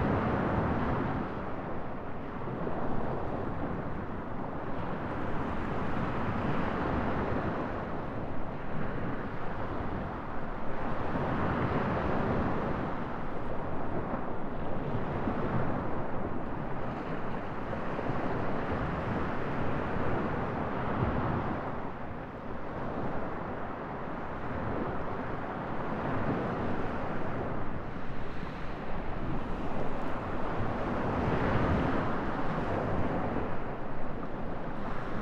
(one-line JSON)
{"title": "South West Beach, Notia Rodos, Griechenland - Rhodos, South-West Beach", "date": "2021-10-23 11:00:00", "description": "Mediterranean Sea on the beach at noontime. Only a slight breeze. The place is called Wave-Beach by the locals, due to the sea usually building up high waves on this side of the island. Not so on this day which made recording possible. Binaural recording. Artificial head microphone set up on the ground, about four meters away from the waterline using an umbrella as windshelter. Microphone facing north west .Recorded with a Sound Devices 702 field recorder and a modified Crown - SASS setup incorporating two Sennheiser mkh 20 microphones.", "latitude": "35.96", "longitude": "27.73", "timezone": "Europe/Athens"}